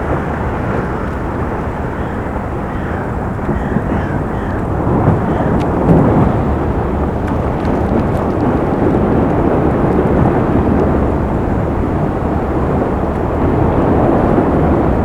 cracking ice of the frozen spree river, towboat maneuvers a coal barge into place, crows, distant sounds from the power station klingenberg
the city, the country & me: january 26, 2014
Berlin, Germany, 26 January 2014